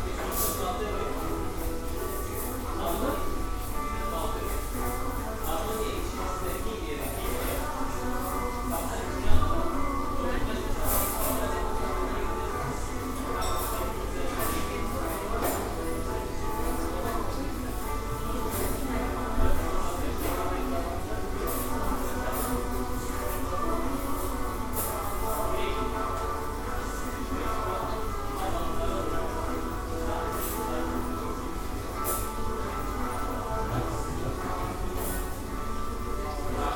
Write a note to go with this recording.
öz urfa ocakbasi, altenessener str. 381, 45326 essen